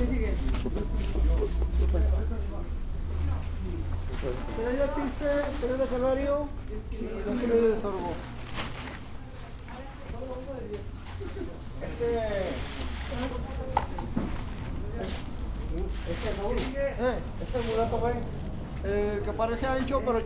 Av La Merced, La Merced, León, Gto., Mexico - Previniéndome con alimento para pájaros al principio de la cuarentena COVID-19.
Preventing myself with bird food at the beginning of the COVID-19 quarantine.
It seems that several people were doing their shopping so that they no longer had to leave during the quarantine.
This is in Comercializadora Los Laureles SAN JOAQUIN Cereales, Granos Y Especias.
I made this recording on March 21st, 2020, at 12:27 p.m.
I used a Tascam DR-05X with its built-in microphones and a Tascam WS-11 windshield.
Original Recording:
Type: Stereo
Parece que varias personas estaban haciendo sus compras para ya no tener que salir durante la cuarentena.
Esto es en Comercializadora Los Laureles SAN JOAQUÍN Cereales, Granos Y Especias.
Esta grabación la hice el 21 de marzo 2020 a las 12:27 horas.
Guanajuato, México